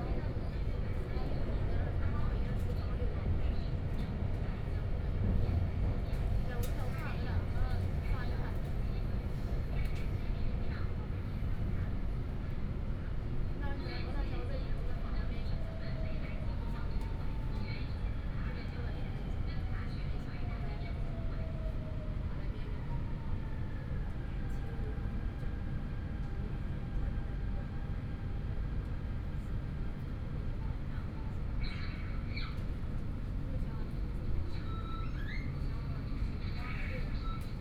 Zhabei District, Shanghai - Line 10 (Shanghai Metro)
from North Sichuan Road station to Yuyuan Garden station, Binaural recording, Zoom H6+ Soundman OKM II